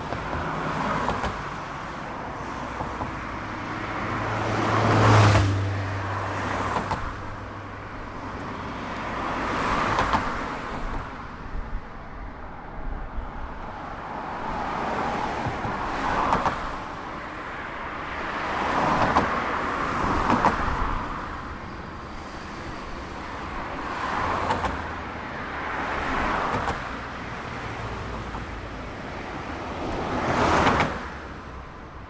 Pancevacki most, Belgrade - Pancevacki most (Pancevo bridge)